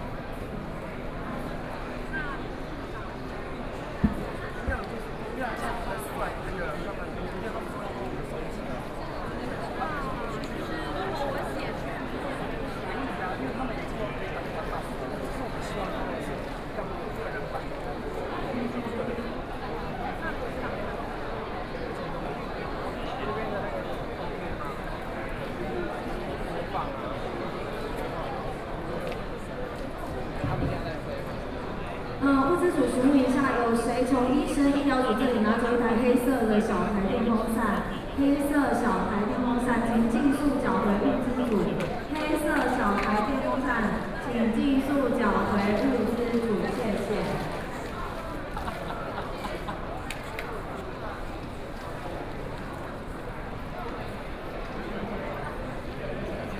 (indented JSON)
{
  "title": "Legislative Yuan, Taiwan - occupied the Legislative Yuan",
  "date": "2014-03-27 20:49:00",
  "description": "Student activism, students occupied the Legislative Yuan（Occupied Parliament）",
  "latitude": "25.04",
  "longitude": "121.52",
  "altitude": "11",
  "timezone": "Asia/Taipei"
}